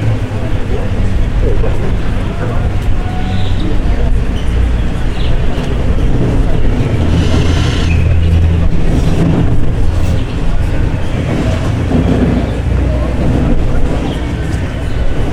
Guanajuato, México - plazoleta guanajuato cerca a represa.
Guanajuato, Mexico, 13 October 2010